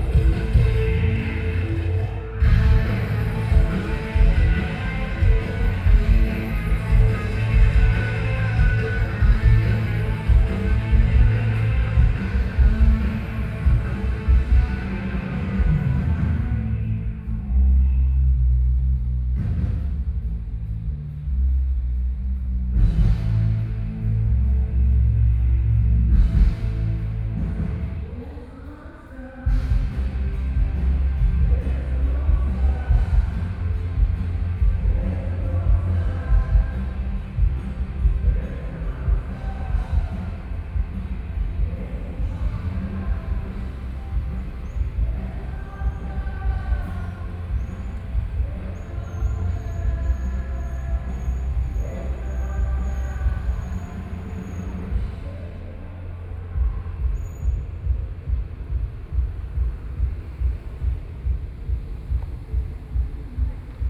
Huashan 1914 Creative Park - Soundwalk
Holiday crowds, Sound Test, Sony PCM D50 + Soundman OKM II
Taipei City, Taiwan